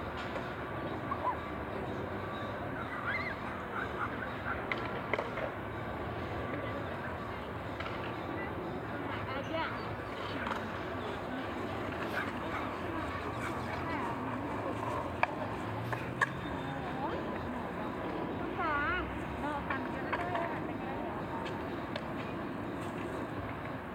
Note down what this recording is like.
In this audio you will hear the Skate Park of 15th Avenue with 4th Street, Zipaquirá municipality. You will hear the nearby transit of this place, people taking their dogs, children out for a walk playing, birds singing, the siren of an ambulance and of course young people riding their skateboards on the track.